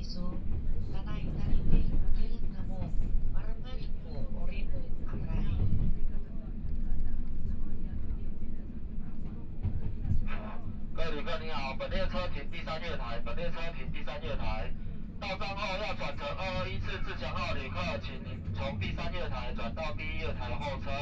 Ji'an Township, Hualien County - After the accident
Taroko Express, Interior of the train, to Hualien Station, Binaural recordings, Zoom H4n+ Soundman OKM II